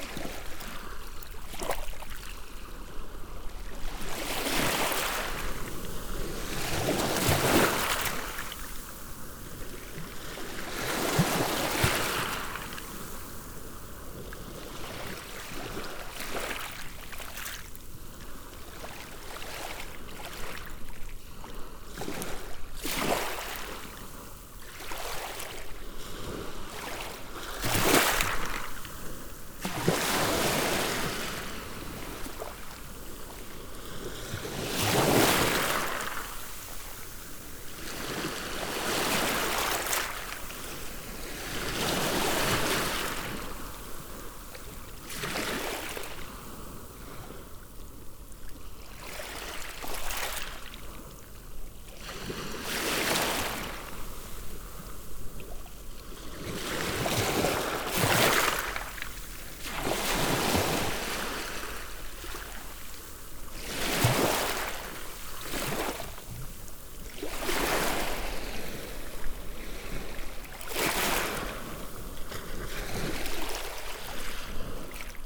Cayeux-sur-Mer, France - The sea at Pointe du Hourdel
Sound of the sea, with waves lapping on the gravels, at pointe du Hourdel, a place where a lot of seals are sleeping.
1 November 2017